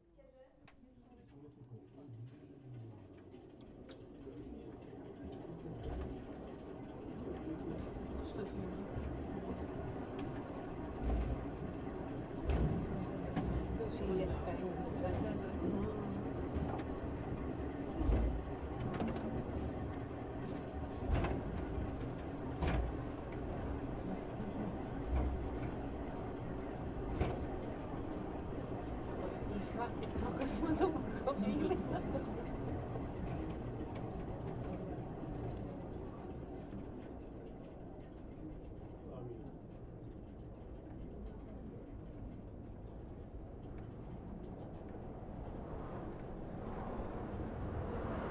Wieliczka, Poland - Salt Mine Lift
Gmina Wieliczka, Poland, 4 August 2012